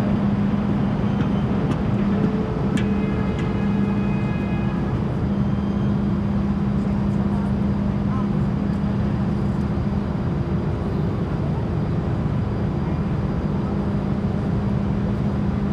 From Trapani to Favignana Island on the Simone Martini boat.